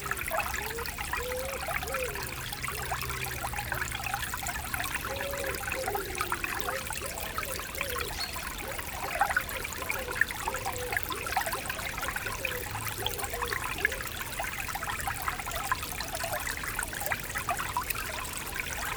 Chaumont-Gistoux, Belgique - The Train river
It's a funny name for a river, but there's nothing about a train there ! The river is called Train. It's a small stream inside a quiet district.